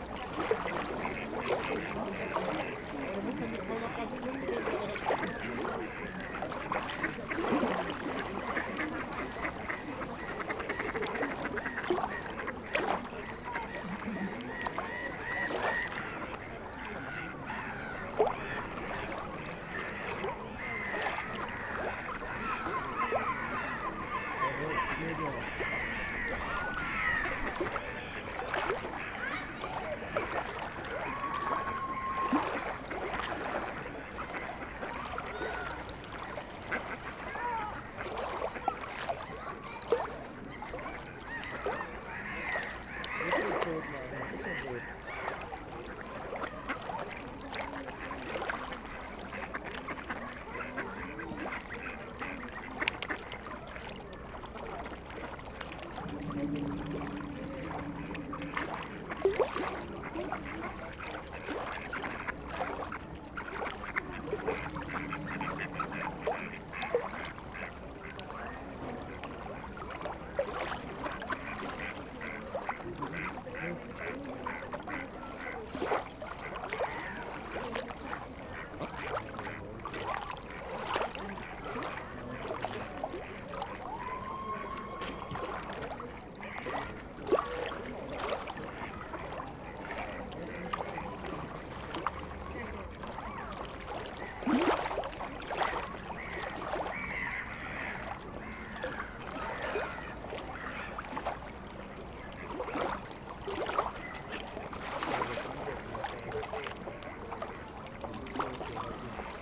berlin: greenwichpromenade - the city, the country & me: children feeding ducks and swans
the city, the country & me: march 19, 2011
19 March, 16:41